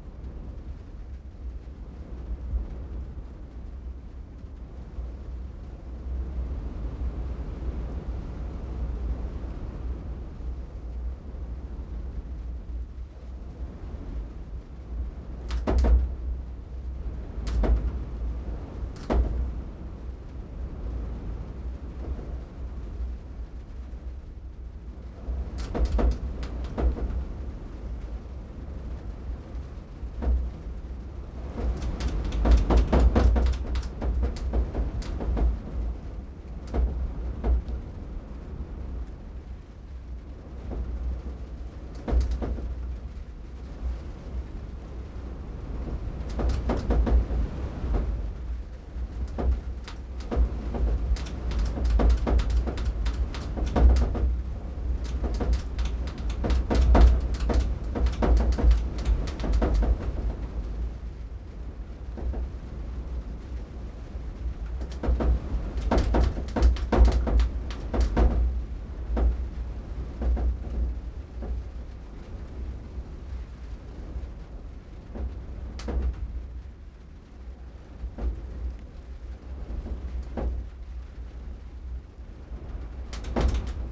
BLOWING IN THE WIND - LOFOTEN - Nesje, 8360 Bøstad, Norvège - BLOWING IN THE WIND - LOFOTEN
CABANE AVEC TOLE DE TOIT ARRACHÉe DANS LE VENT ET LA PLUIE.
SD MixPre6II + DPA4041 dans Cinela PIA2 + GEOPHONE